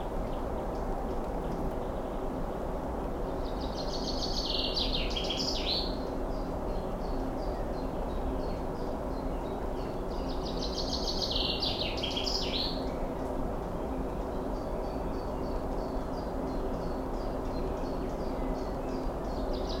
at the southern edge of opencast (Tagebau) Hambach, near village Niederzier, ambience at a former road, which now stops at a stripe of dense vegetation. hum of distant machinery.
(Sony PCM D50)